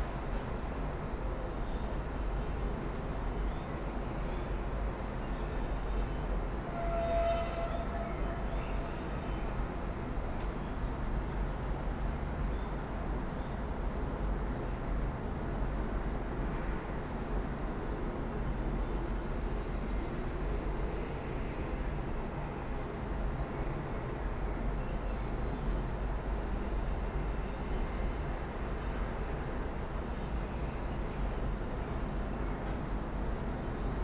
Poznan, Mateckiego street - window slit flute
recorder placed on a window sill. windows are closed but there is a small slit that lets in the outside sounds. Heavy traffic is already daunting at this time of a day. As well as unceasing landscape and gardening works in the neighborhood nearby. But the highlight of the recording is a sound that is similar to a wind instrument. It occurs only when the wind is strong and blows into western direction . You can also hear the cracks of my busted ankle. (sony d50)
Poznań, Poland, 13 April 2018, ~07:00